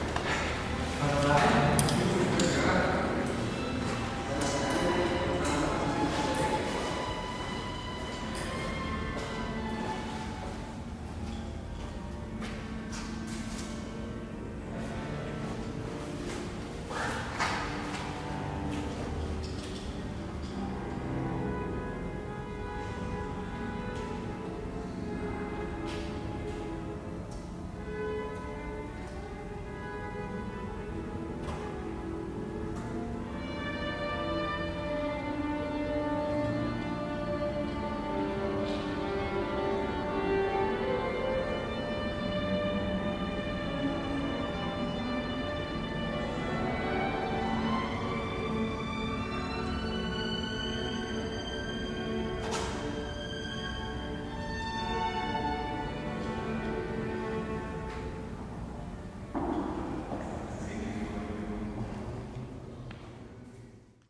Music University corridor, Vienna
corridor at the Music University, Vienna
4 June 2011, ~14:00